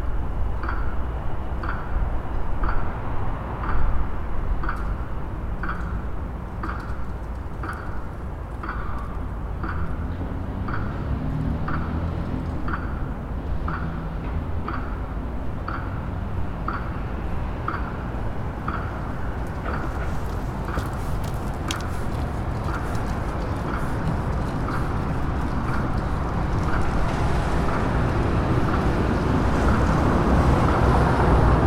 пер. Макаренко, Санкт-Петербург, Россия - Leningrad metronome
Leningrad metronome, zoom h4